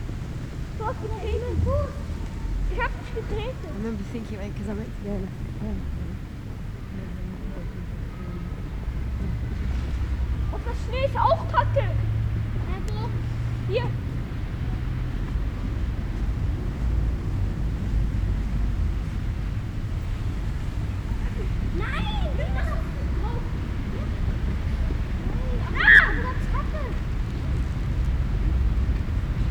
Berlin: Vermessungspunkt Friedel- / Pflügerstraße - Klangvermessung Kreuzkölln ::: 09.12.2010 ::: 16:05